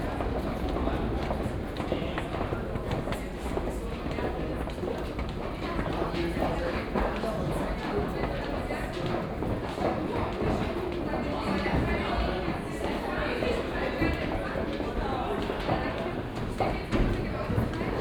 Kottbusser Tor - Subway
weekend, kottbusser tor U1/U8 subway station, arrival at 1st floor platform, stairway malfunction, move downwards to subway level, no train departure within 20min, leaving station by elevator.
Berlin, Germany, 12 December